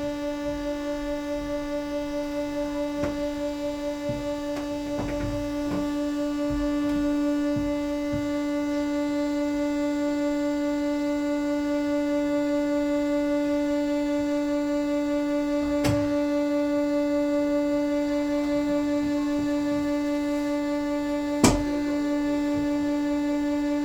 Sound Room In Marjaniemi, Hailuoto, Finnland - line tilt installation 04
Hailuoto, Finland